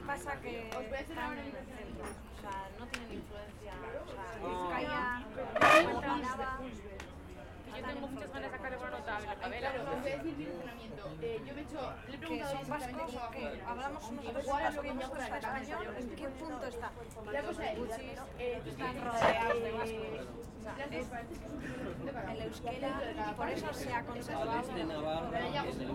It was recorded at the terrace cafeteria of the faculty of Philosophy and Letters. We can hear different types of conversation between different students and there are little noises in the background, but the main source of noise is the one that are making the students talking. We can also hear them taking and putting back their glasses and cans on the table.
Recorded with a Zoom H4n.